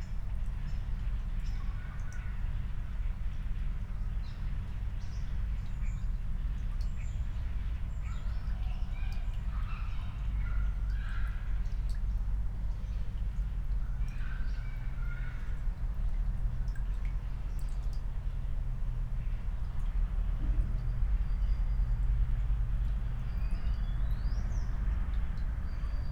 Mariánské Radčice, Tschechische Republik - under bridge, village ambience, water flow
listening to the village from below the bridge, light water flow (Sony PCM D50, Primo EM172)